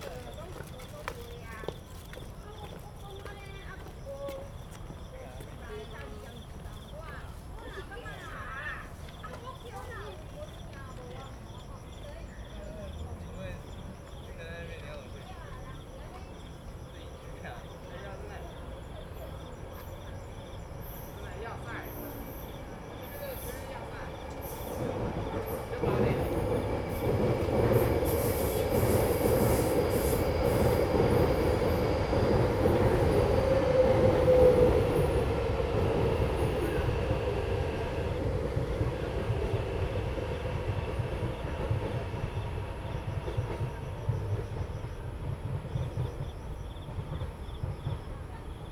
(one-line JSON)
{"title": "Tamsui District, New Taipei City - Next to the woods", "date": "2016-08-19 18:53:00", "description": "Insect sounds, Traffic Sound, MRT trains through, Footsteps, Bicycle sound\nZoom H2n MS+XY +Sptial Audio", "latitude": "25.17", "longitude": "121.45", "altitude": "12", "timezone": "Asia/Taipei"}